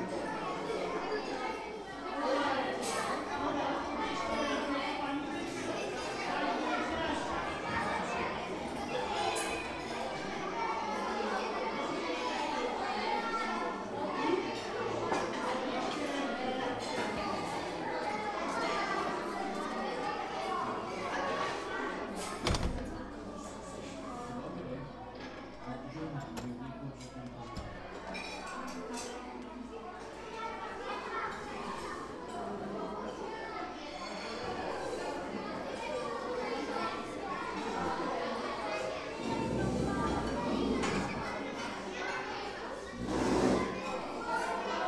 Gmina Strzelce Krajeńskie, Polen - Refectory
two school classes at work. A most beautiful choir.